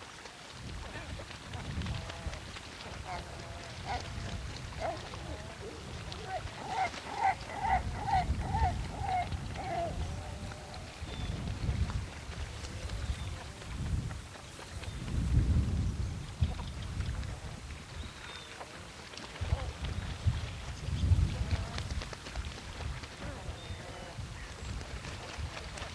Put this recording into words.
Seabirds from the Norwegian Sea come to clean themselves and mate onto the waters of Lake Ågvanet as the the winds pick up gusts through the grasses on a low cliff.